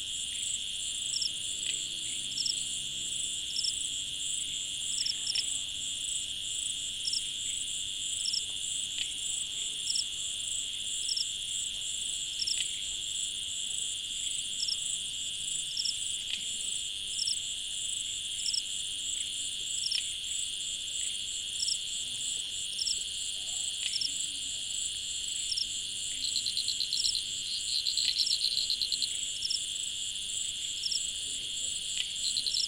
Koforidua, Ghana - Suburban Ghana Soundscapes 1
A part of field recordings for soundscape ecology research and exhibition.
Rhythms and variations of vocal intensities of species in sound.
Recording format AB with Rode M5 MP into ZOOM F4.
Date: 19.04.2022.
Time: Between 10 and 12 PM.